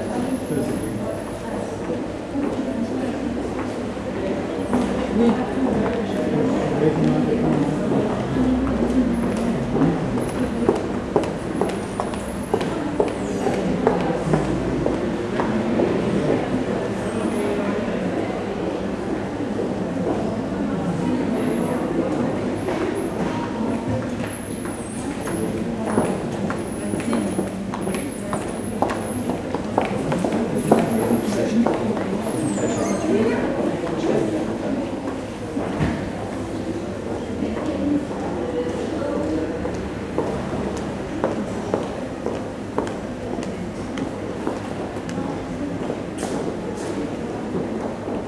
Lyon, Passage de l'Argue
Lyon, Passage de lArgue
Minidisc recording from 1999